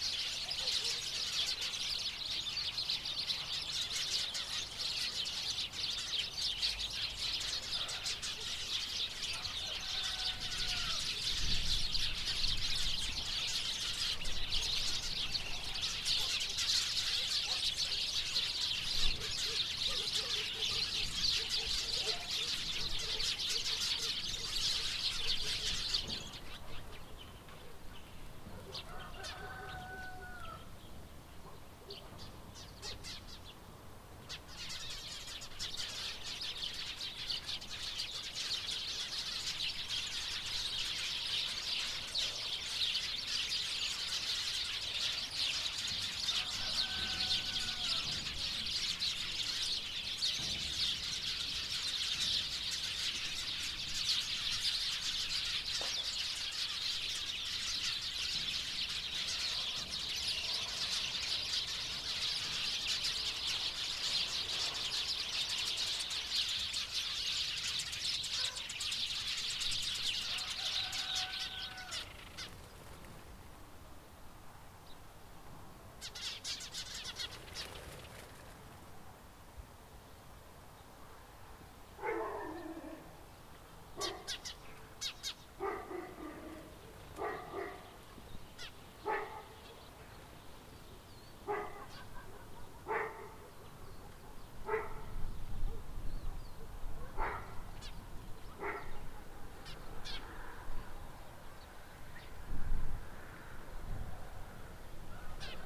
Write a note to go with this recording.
Record by: Alexandros Hadjitimotheou